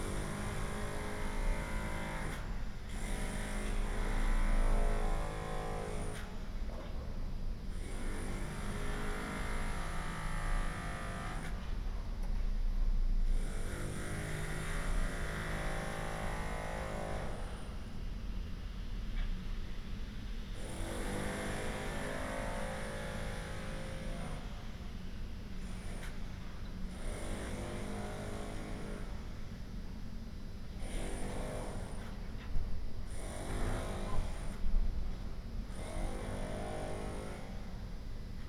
Ascolto il tuo cuore, città. I listen to your heart, city. Several chapters **SCROLL DOWN FOR ALL RECORDINGS** - Morning Autumn terrace in A-flat in the time of COVID19 Soundscape
"Morning Autumn terrace in A-flat in the time of COVID19" Soundscape
Chapter CXLI of Ascolto il tuo cuore, città. I listen to your heart, city
Thursday November 12th, 2020. Fixed position on an internal terrace at San Salvario district Turin, sixth day of new restrictive disposition due to the epidemic of COVID19.
Start at 10:30 a.m. end at 10:52 a.m. duration of recording 22'06''